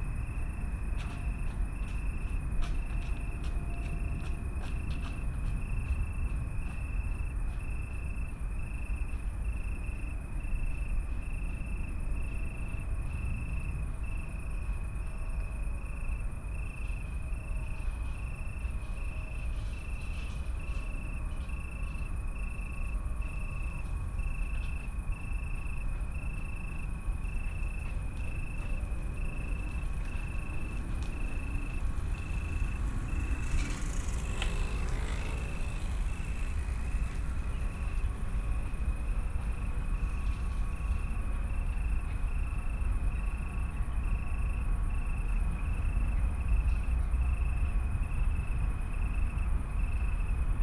Stadtgarten, Köln - night ambience with crickets, jogger, train and churchbells
Köln, Stadtgarten, night ambience with crickets, jogger, train and 10pm churchbells
(Sony PCM D50, Primo EM172)